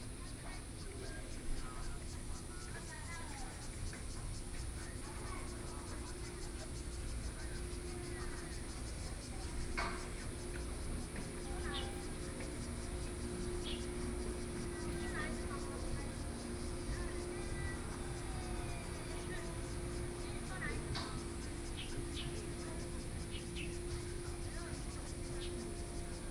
Liyu Lake, Shoufeng Township - Footsteps
Footsteps, Tourists, Yacht on the lake, Birdsong, Very hot days